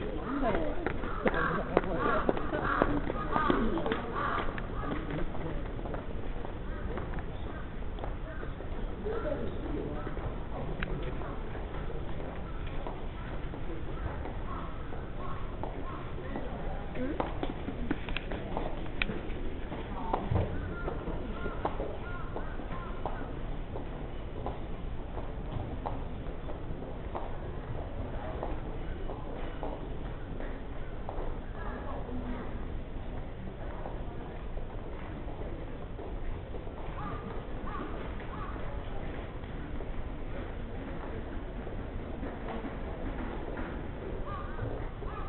silent prayer at meiji temple 17.12.07 / 2pm